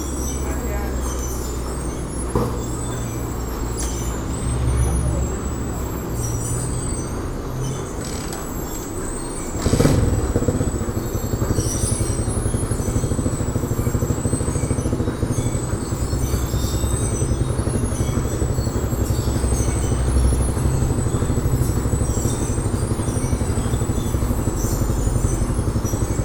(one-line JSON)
{"title": "Laguna de Términos, Lomas de la Trinidad, León, Gto., Mexico - In front of the Tortilleria Sagrado Corazon de Jesus in Lomas de la Trinidad.", "date": "2021-10-11 14:22:00", "description": "I made this recording on October 11th, 2021, at 14:22 p.m.\nI used a Tascam DR-05X with its built-in microphones and a Tascam WS-11 windshield.\nOriginal Recording:\nType: Stereo\nFrente a la Tortillería Sagrado Corazón de Jesús en Lomas de la Trinidad.\nEsta grabación la hice el 11 de octubre de 2021 a las 14:22 horas.\nUsé un Tascam DR-05X con sus micrófonos incorporados y un parabrisas Tascam WS-11.", "latitude": "21.14", "longitude": "-101.70", "altitude": "1839", "timezone": "America/Mexico_City"}